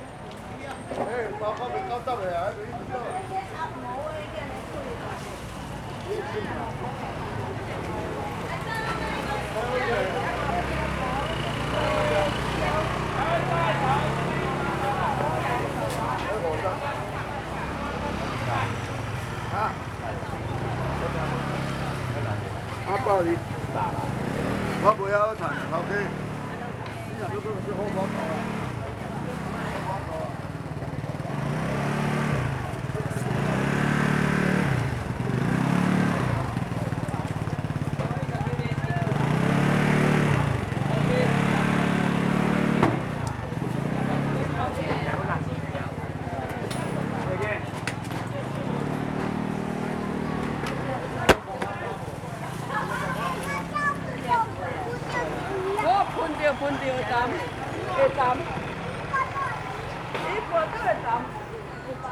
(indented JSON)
{
  "title": "Ln., Zhongzheng Rd., Zhonghe Dist. - Walking in the market",
  "date": "2012-02-14 16:39:00",
  "description": "Walking in the market\nSony Hi-MD MZ-RH1+Sony ECM-MS907",
  "latitude": "25.00",
  "longitude": "121.49",
  "altitude": "16",
  "timezone": "Asia/Taipei"
}